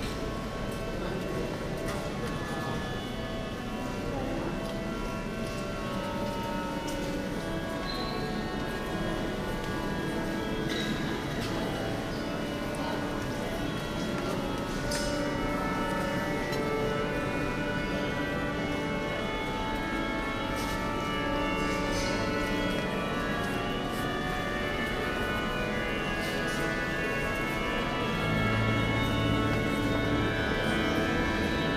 Fragment of a mass in de Cathédrale de Notre Dame (1). Binaural recording.